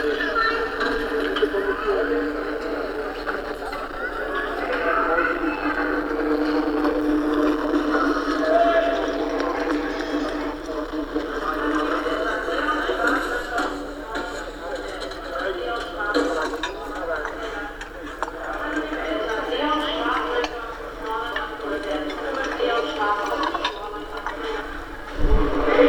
Sendung Radio FSK/Aporee in der Großen Bergstraße. Teil 4 - 1.11.2009
Hamburg, Germany